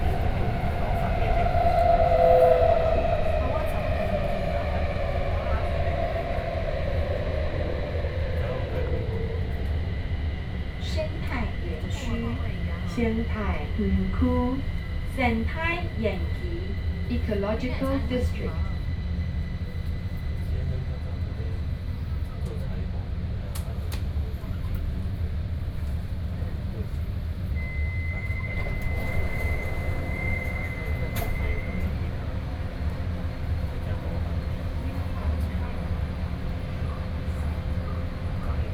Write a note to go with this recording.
inside the MRT train, Sony PCM D50 + Soundman OKM II